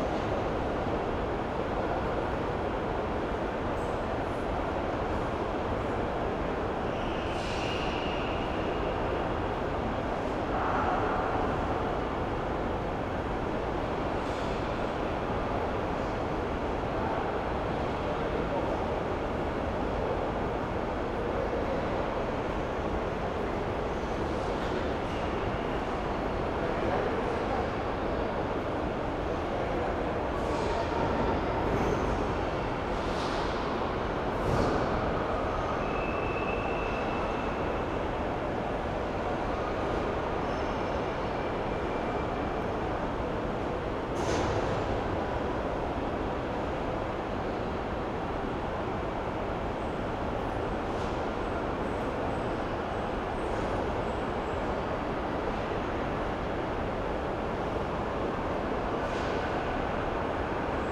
porto airport - airport hall morning ambience
had to spent half the night at porto airport, more or less sleeping on a bench. sound of the almost empty hall at morning
Maia, Portugal, 17 October 2010